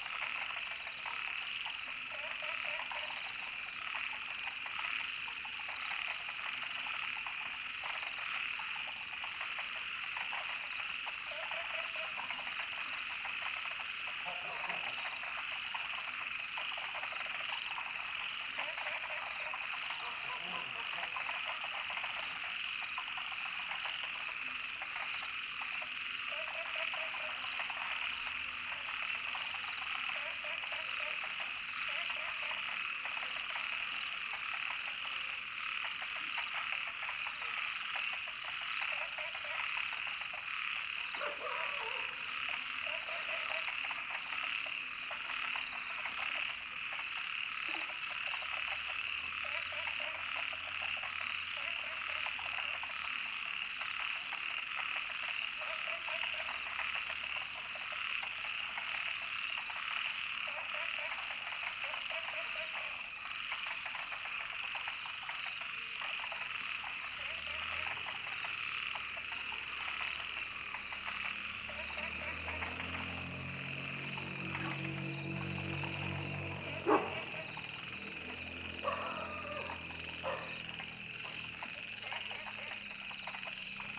江山樂活, 埔里鎮桃米里 - frog and Aircraft
All kinds of frog sounds, Aircraft flying through
Zoom H2n MS+XY